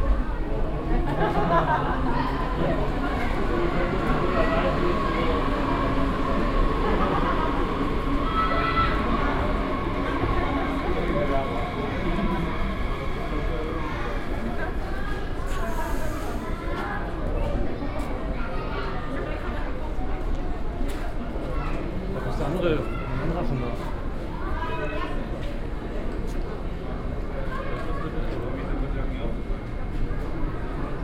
essen, main station, track 4
At track 4 -a female announcement - the sound of a school class and other travellers waiting on their train connection - a male announcement and the arrival of a train.
Projekt - Stadtklang//: Hörorte - topographic field recordings and social ambiences